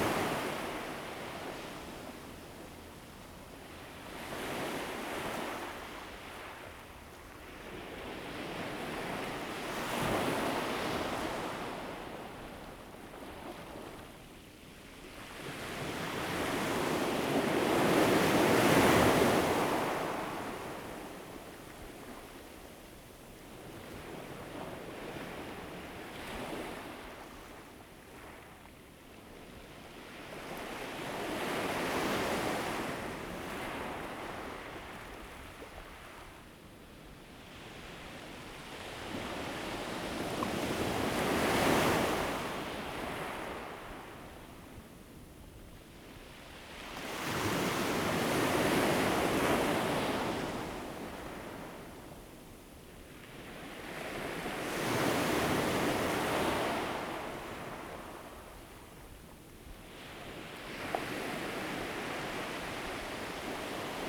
三仙里, Chenggong Township - sound of the waves
Sound of the waves, Helicopter
Zoom H2n MS+XY